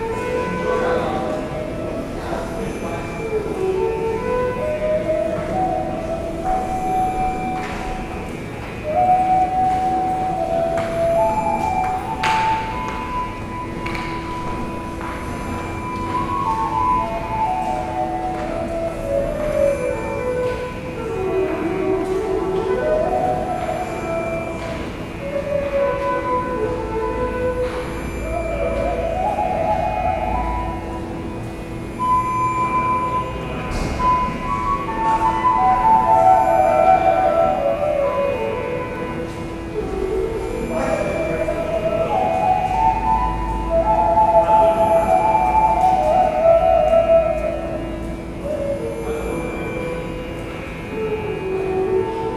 berlin: u-bahnhof schönleinstraße - flute player
never heard the flute player here before.